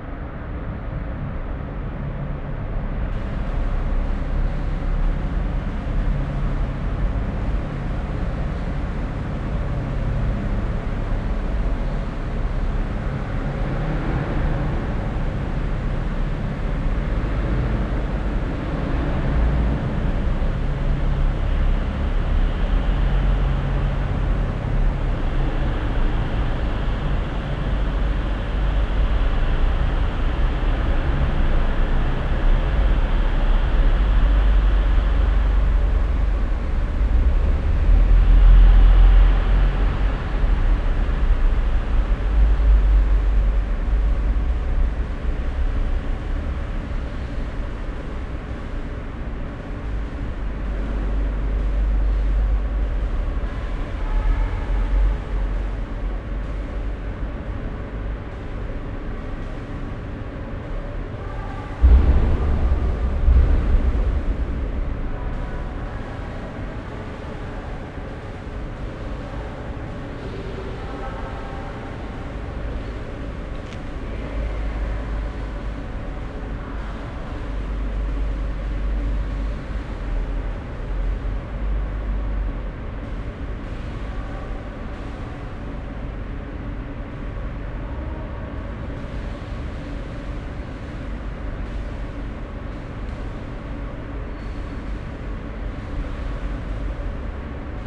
Altstadt, Düsseldorf, Deutschland - Düsseldorf, parking garage
Inside an almost empty parking garage. The deep resonating sound of car motors in the distant, steps and a car starting and exiting the level.
This recording is part of the exhibition project - sonic states
soundmap nrw - topographic field recordings, social ambiences and art places